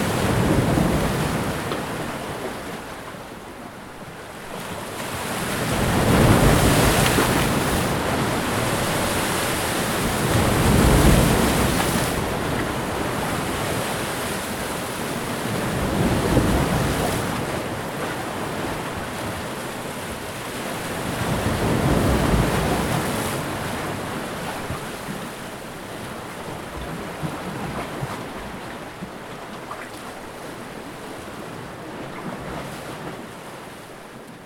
Brimley Rd S, Scarborough, ON, Canada - Waves of Lake Ontario 3
Heavier waves breaking against shoreline rocks.
15 August 2019, ~15:00